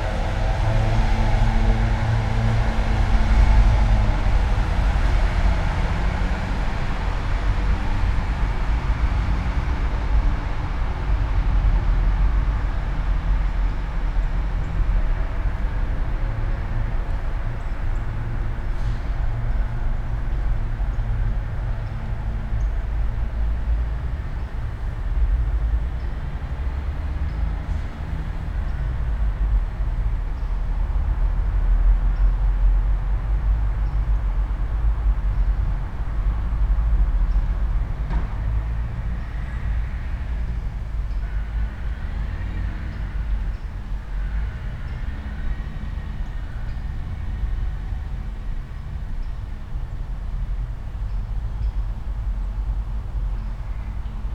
all the mornings of the ... - aug 25 2013 sunday 09.34
Maribor, Slovenia, 25 August 2013